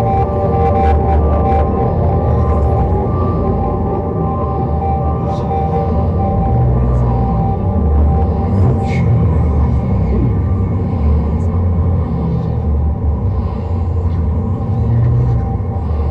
Cetatuia Park, Klausenburg, Rumänien - Cluj, Fortress Hill project, water fountain sculptures
At the temporary sound park exhibition with installation works of students as part of the Fortress Hill project. Here the close up recorded sound of the water fountain sculpture realized by Raul Tripon and Cipi Muntean in the first tube of the sculpture.
Soundmap Fortress Hill//: Cetatuia - topographic field recordings, sound art installations and social ambiences
Cluj-Napoca, Romania